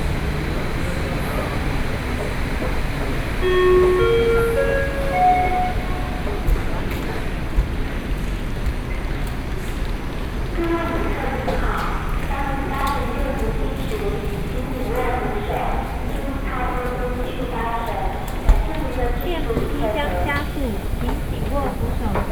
Songshan Airport Station, Taipei City - MRT stations
9 November, 12:31